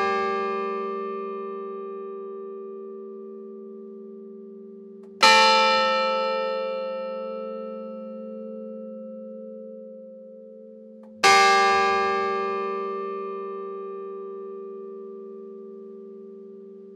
Rue de l'Abbaye, Belhomert-Guéhouville, France - Belhomert - Église St-Jean
Belhomert (Eure-et-Loir)
Église St-Jean
Le Glas (sur 2 cloches)
France métropolitaine, France, 12 November 2019, 10:00